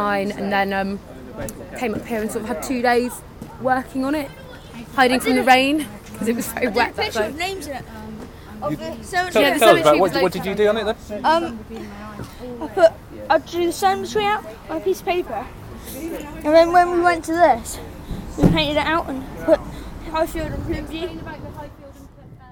Efford Walk Two: Crazy Glue groupss mural - Crazy Glue groupss mural
Plymouth, UK